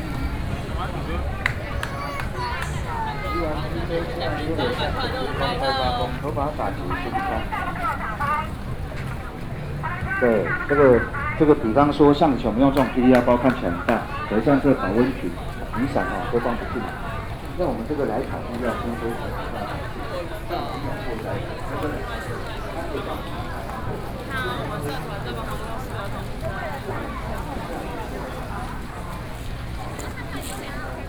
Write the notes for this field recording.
Night Market, Sony PCM D50 + Soundman OKM II